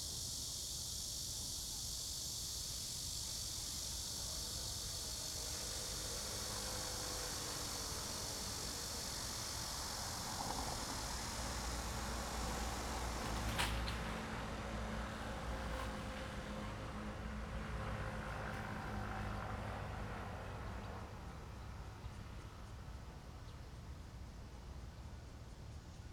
民富路三段, Yangmei Dist. - in the railroad track side
in the railroad track side, traffic sound, birds sound, Cicada cry, The train runs through
Zoom H2n MS+XY
Taoyuan City, Taiwan, 12 August 2017, 16:00